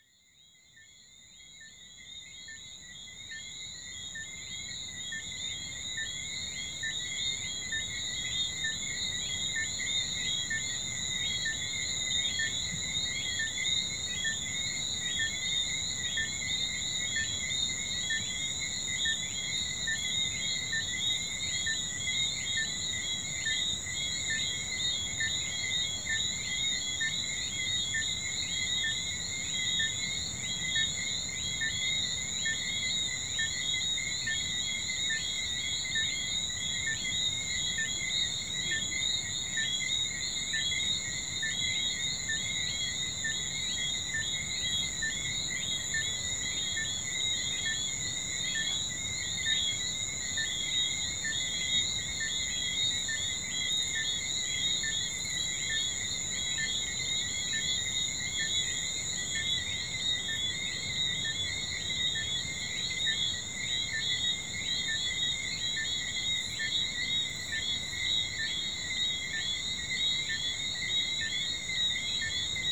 Close to Anse des Rochers, Saint-François, Guadeloupe - Guadeloupe island insects & frogs at night
Various sounds from insects and frogs at night, some of them sounding electronic or strident. Typical of the Guadeloupe island night ambiances.